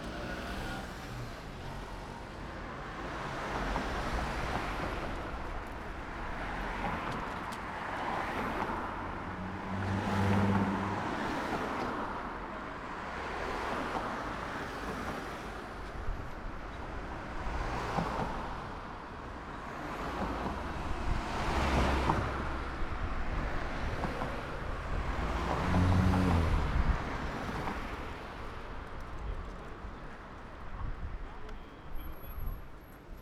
{
  "title": "Berlin, Hermannstrasse - following a madman",
  "date": "2015-05-30 14:57:00",
  "description": "walking along the street into the subway station and following a mad guy who was stopping every once in a while and shouting at the top of his lungs towards unspecified direction. he got on the train and rode away but i could still see him shouting in the car, waving his hands and scaring the hell out of the other passengers.",
  "latitude": "52.47",
  "longitude": "13.43",
  "altitude": "48",
  "timezone": "Europe/Berlin"
}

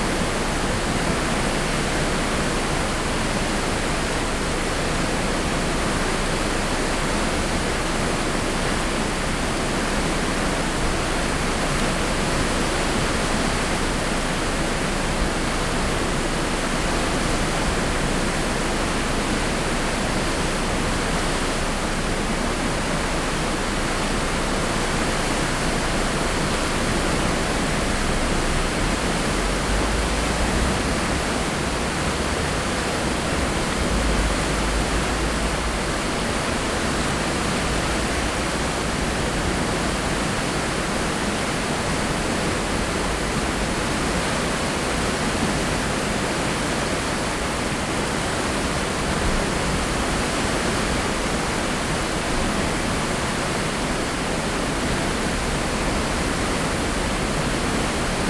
{
  "title": "Mursteig, Graz, Austria - river Mur flow",
  "date": "2012-09-02 12:50:00",
  "description": "sound of river Mur near Mursteig pedestrian bridge. the river flows quick and wild at this place.\n(PCM D-50, DPA4060)",
  "latitude": "47.07",
  "longitude": "15.44",
  "altitude": "354",
  "timezone": "Europe/Vienna"
}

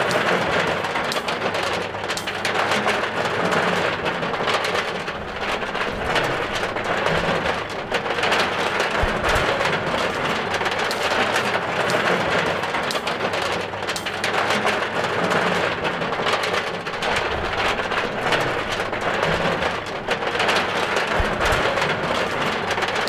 {
  "title": "Currumbin Waters, QLD, Australia - Woodchip factory",
  "date": "2015-04-16 10:45:00",
  "description": "Children recording woodchip being spun in a mixer inside a small warehouse factory beside their school. Recorded stood behind a metal fence.\nPart of an Easter holiday sound workshop run by Gabrielle Fry, teaching children how to use equipment to appreciate and record sounds in familiar surroundings. Recorded using a Rode NTG-2 and Zoom H4N.\nThis workshop was inspired by the seasonal sound walks project, run by DIVAcontemporary in Dorset, UK.",
  "latitude": "-28.15",
  "longitude": "153.46",
  "altitude": "13",
  "timezone": "Australia/Brisbane"
}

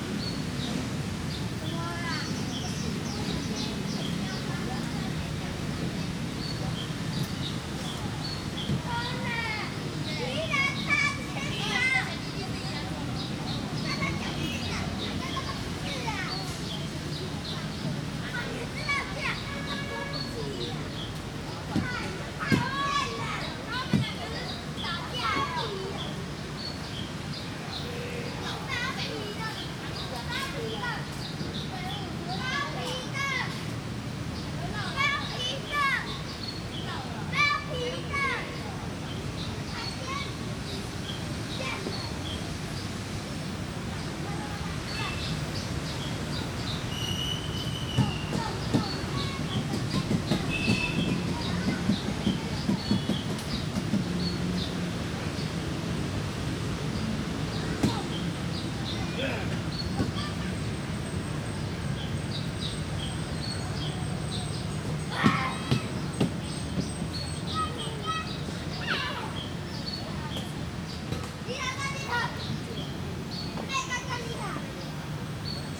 {
  "title": "正義公園, Sanchong Dist., New Taipei City - In the park",
  "date": "2011-06-22 19:29:00",
  "description": "In the park, Children Playground, Birds singing, Child\nSony Hi-MD MZ-RH1 +Sony ECM-MS907",
  "latitude": "25.07",
  "longitude": "121.50",
  "altitude": "8",
  "timezone": "Asia/Taipei"
}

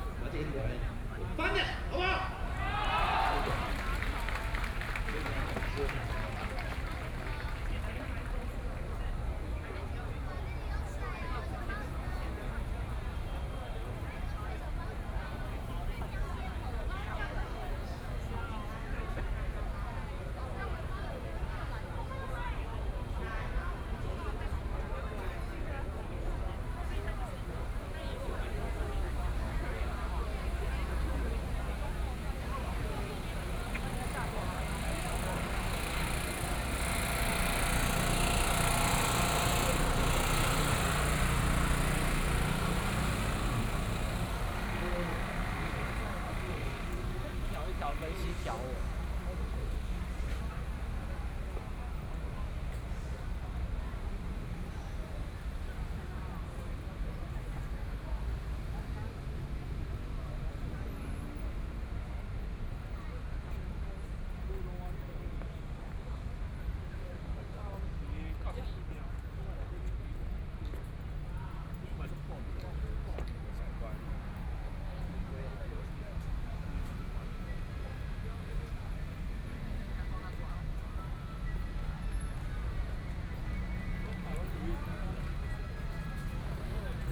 {
  "title": "立法院, Taipei City - Walking through the site in protest",
  "date": "2014-03-19 22:04:00",
  "description": "Walking through the site in protest, People and students occupied the Legislature\nBinaural recordings",
  "latitude": "25.04",
  "longitude": "121.52",
  "altitude": "10",
  "timezone": "Asia/Taipei"
}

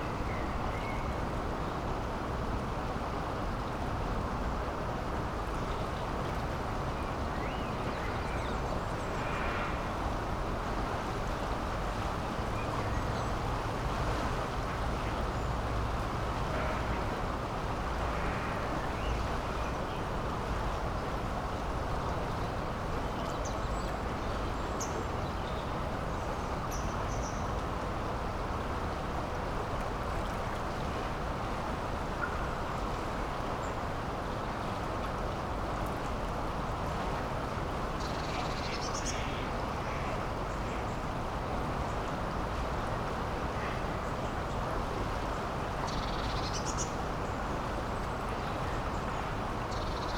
{
  "title": "Woodin's Way, Oxford - on bridge over Castle Mill Stream",
  "date": "2014-03-12 13:05:00",
  "description": "sound of water and city ambience heard on bridge over Castle Mill Stream\n(Sony PCM D50)",
  "latitude": "51.75",
  "longitude": "-1.26",
  "timezone": "Europe/London"
}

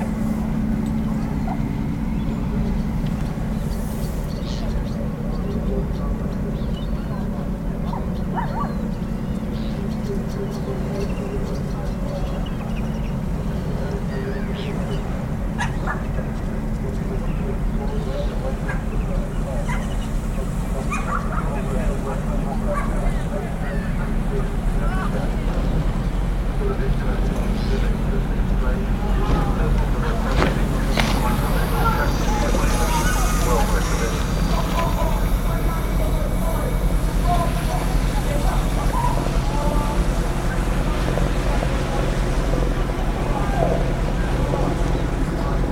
{
  "title": "Stone Pier, Weymouth - Between piers, tombstoning and tripper boats.",
  "date": "2020-09-06 16:45:00",
  "description": "Recorded on a Tascam dr 05x on a sunny Sunday afternoon. Schools due to restart on the following day after closing for the lockdown in March which morphed into a long long summer holiday",
  "latitude": "50.61",
  "longitude": "-2.44",
  "altitude": "1",
  "timezone": "Europe/London"
}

